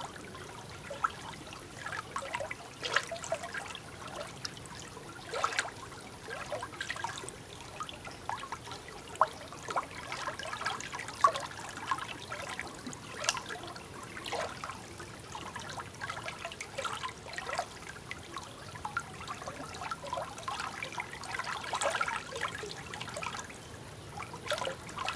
The Eure river and the small stream, the Guéreau river. Recorded at night as there's very very very much planes in Maintenon. It was extremely hard to record.